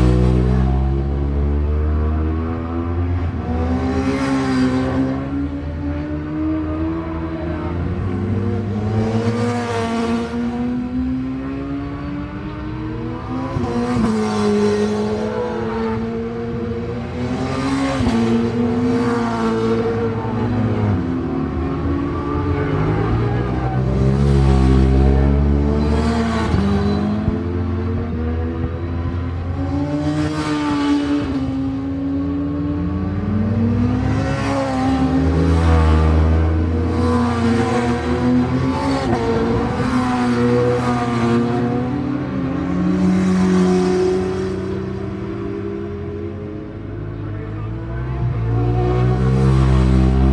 Leicester, UK - british superbikes 2002 ... superbikes ...
british superbikes 2002 ... superbikes qualifying ... mallory park ... one point stereo mic to minidisk ... date correct ... no idea if this was am or pm ..?